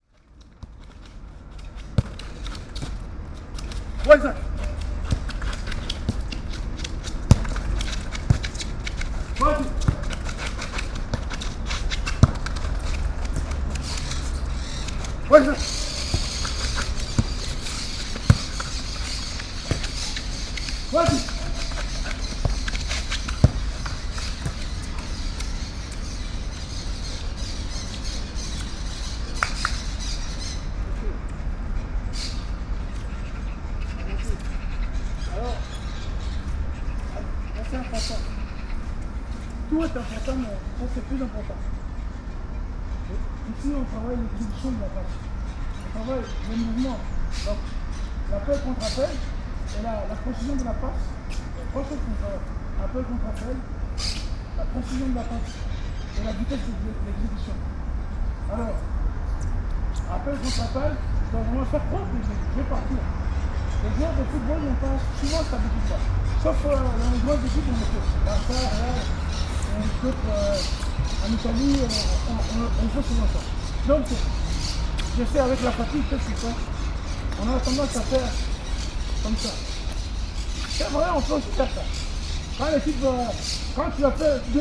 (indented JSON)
{
  "date": "2011-02-03 11:01:00",
  "description": "Brussels, Parc Tenbosch, football training.\nIxelles, Parc Tenbosch, entrainement de foot.",
  "latitude": "50.82",
  "longitude": "4.36",
  "timezone": "Europe/Brussels"
}